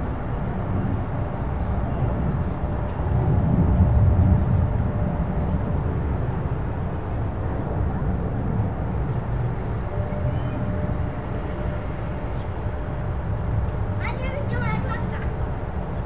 first sunny, spring day. ambience around the apartment buildings. kids playing, small planes flying by, increased bird activity. a construction site emerged close to the housing estate. new buildings are being build. you can her the excavators and big trucks working. (roland r-07)
Poznan, Poland, 23 March 2019